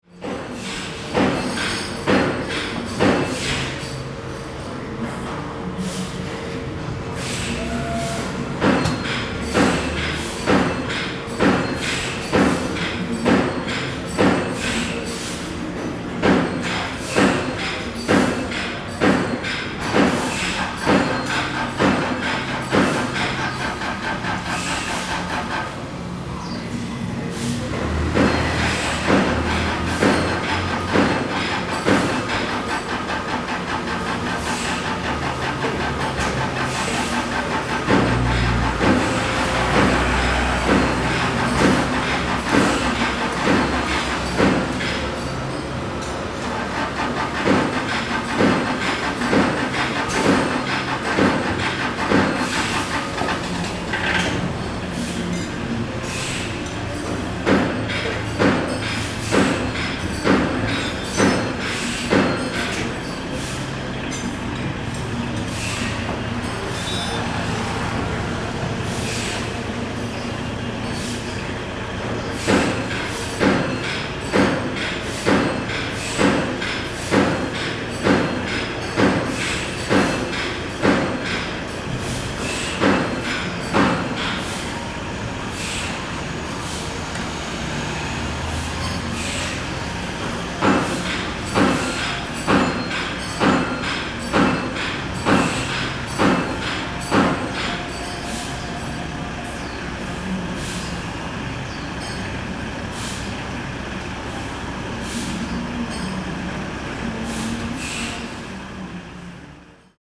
Ln., Sec., Zhongyang Rd., Tucheng Dist., New Taipei City - Sound of the Factory
Outside the factory, Sound of the Factory
Zoom H4n +Rode NT4
19 December 2011, 11:41